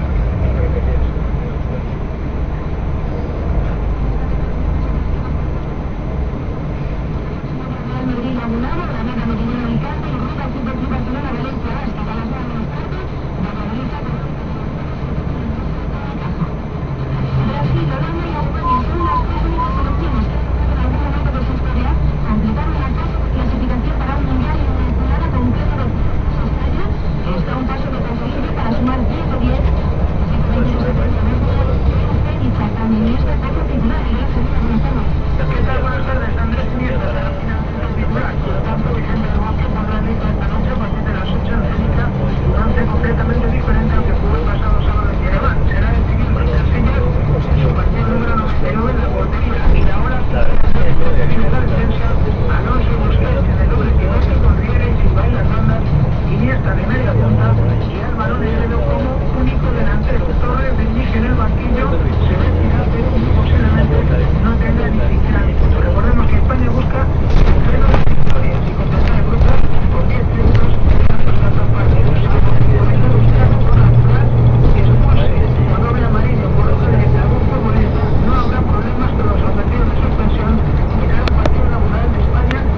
Leioa (basque country)
2009/10/14. 17:18h. Returning from the job in the university. The sound in the bus with another workers and the fucking stupid radio station. No students as you realize.